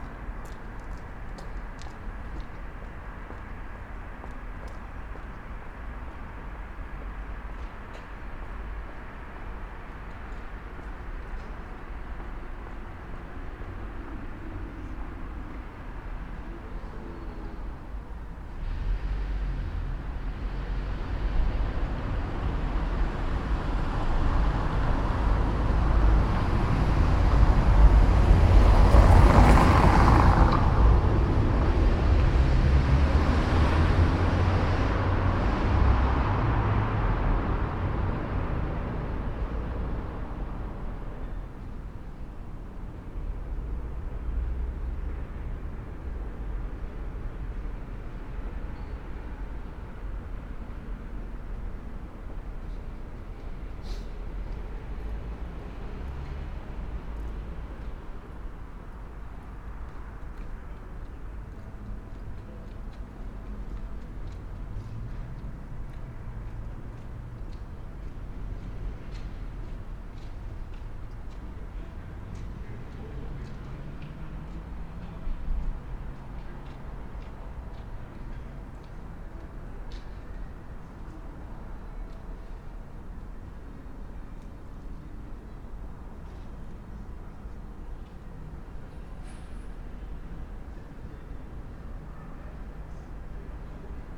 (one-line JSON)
{"title": "berlin: friedelstraße - the city, the country & me: night-time ambience", "date": "2014-02-27 00:22:00", "description": "night owls, waitress of a café securing the tables and chairs outside with cables, cars passing by\nthe city, the country & me: february 27, 2014", "latitude": "52.49", "longitude": "13.43", "altitude": "46", "timezone": "Europe/Berlin"}